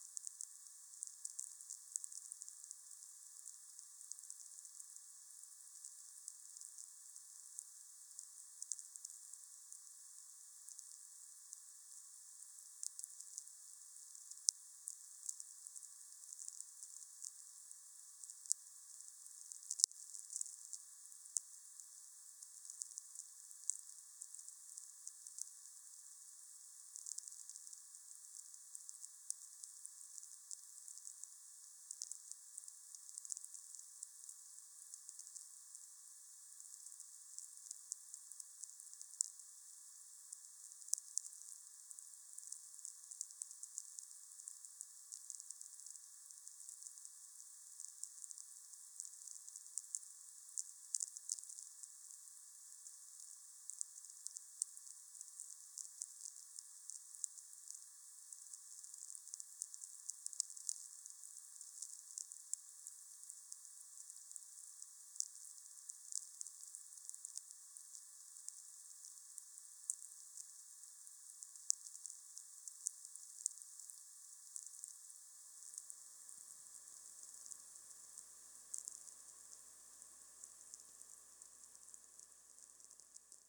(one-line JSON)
{"title": "Vyžuonos, Lithuania, listening VLF", "date": "2020-03-15 16:20:00", "description": "Very Low Frequency or Atmospheric Radio receiving", "latitude": "55.60", "longitude": "25.49", "altitude": "100", "timezone": "Europe/Vilnius"}